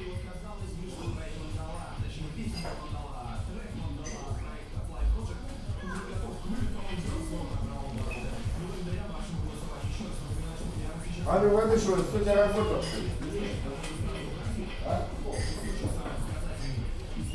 Tallinn Kopli station bar
coffee break in bar at kopli station.
Tallinn, Estonia